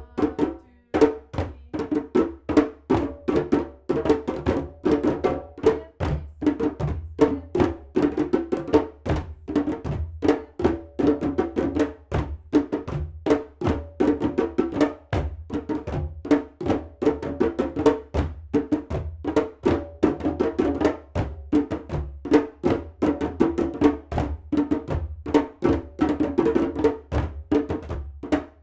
Marin, CA, USA - drumming
drumming on the dock